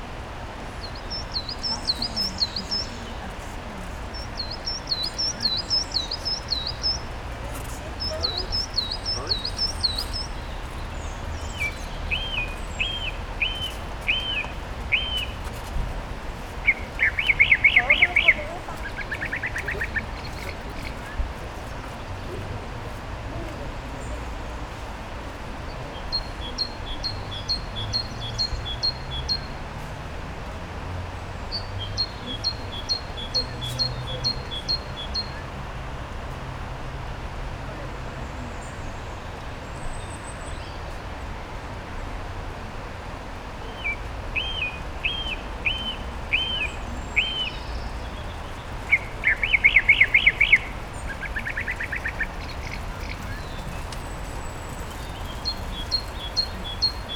The Alnwick Garden, Denwick Lane, Alnwick, UK - a recording ... of a recording ...
a recording ... of a recording ... recording of a loop of bird song ... bird song from ... wren ... great tit ... song thrush ... coal tit ... background noise of voices ... fountains ... traffic ... and actual bird calls ... lavalier mics clipped to baseball cap ...